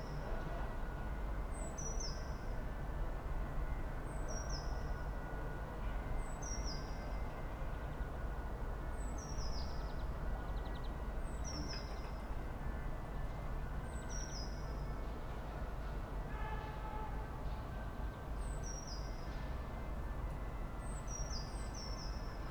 Berlin Bürknerstr., backyard window - friday afternoon
temperature has risen all week, new sounds are in the air, anticipation of spring. distant music from the nearby market, a woman dumps waste, voices, birds.
(tech: sony pcm d50 120°)
Berlin, Germany, 2 March 2012, 16:50